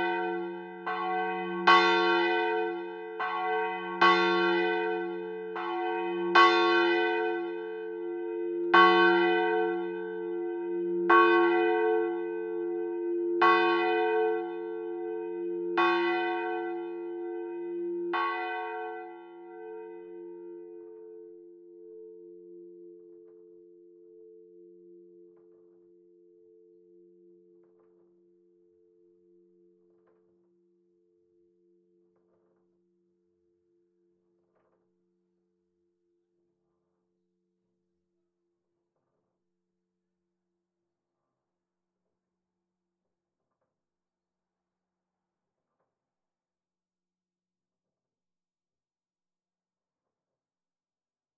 Rue de l'Abbé Fleury, Saint-Victor-de-Buthon, France - St-Victor de Buthon - Église St-Victor et St-Gilles
St-Victor de Buthon (Eure-et-Loir)
Église St-Victor et St-Gilles
volée cloche 1
Prise de son : JF CAVRO